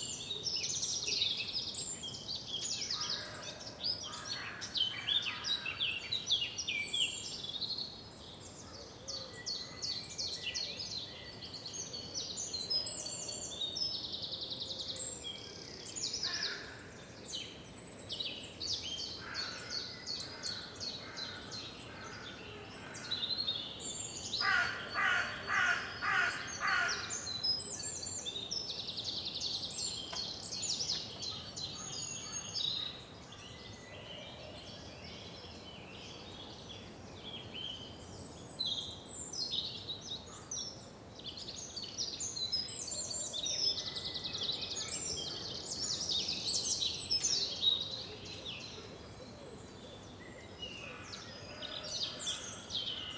Avenue Achille Reisdorff, Uccle, Belgique - finally peace 4